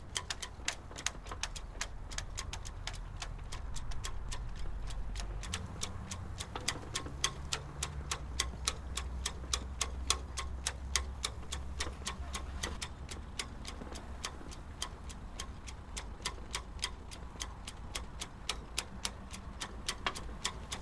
wind at Portland Marina 9.12.11
sailing masts in wind at Marina
Dorset, UK, 19 December 2011